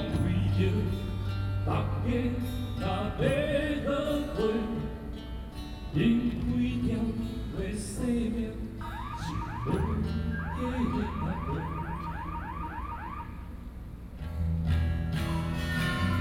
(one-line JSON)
{"title": "Legislative Yuan, Taiwan - Protest songs", "date": "2013-05-26 19:18:00", "description": "Protest songs, Antinuclear, Zoom H4n+ Soundman OKM II, Best with Headphone( SoundMap20130526- 7)", "latitude": "25.04", "longitude": "121.52", "altitude": "20", "timezone": "Asia/Taipei"}